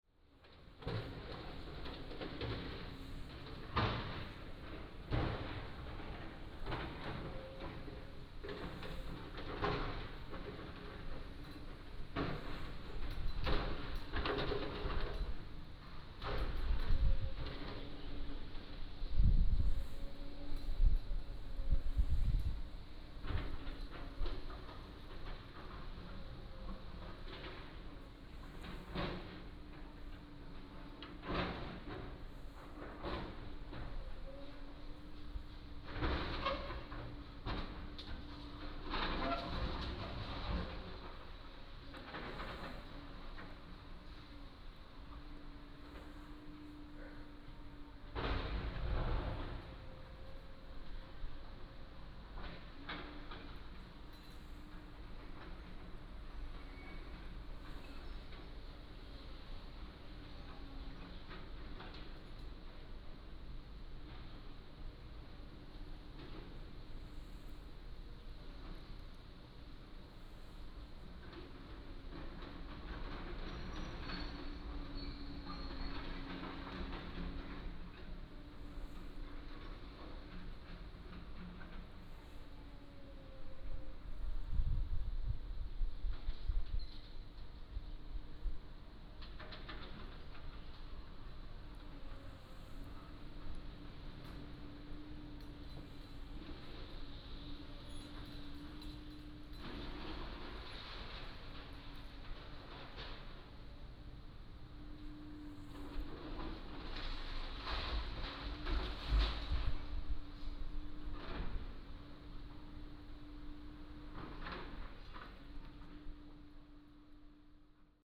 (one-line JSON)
{"title": "鐵線里, Magong City - Construction sound", "date": "2014-10-23 12:09:00", "description": "In the temple, Small village, Construction, House demolition", "latitude": "23.53", "longitude": "119.60", "altitude": "4", "timezone": "Asia/Taipei"}